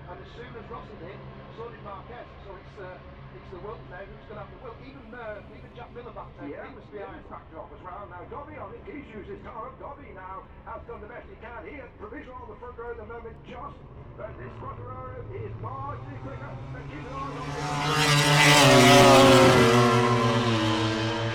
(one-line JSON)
{"title": "Silverstone Circuit, Towcester, UK - british motor cycle grand prix 2019 ... moto grand prix ... q2 ...", "date": "2019-08-24 14:35:00", "description": "british motor cycle grand prix 2019 ... moto grand prix qualifying two ... and commentary ... copse corner ... lavalier mics clipped to sandwich box ...", "latitude": "52.08", "longitude": "-1.01", "altitude": "158", "timezone": "Europe/London"}